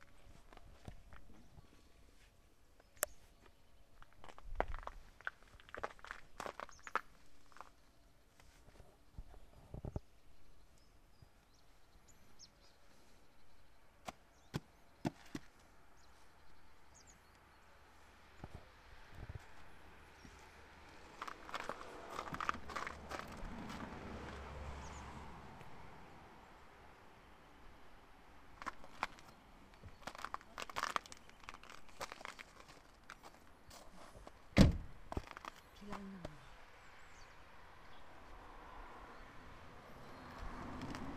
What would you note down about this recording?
right before leaving Polcyn, spring birds mixed beautifully with human voices & passing cars on the still frozen over parking lot